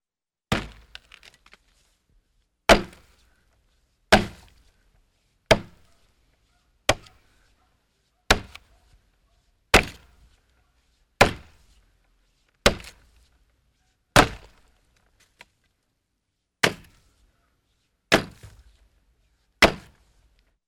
heiligenhaus, kettwiger strasse, äste abschlagen
baum zerteilung mit axt im frühjahr 07, mittags
project: :resonanzen - neanderland - soundmap nrw: social ambiences/ listen to the people - in & outdoor nearfield recordings, listen to the people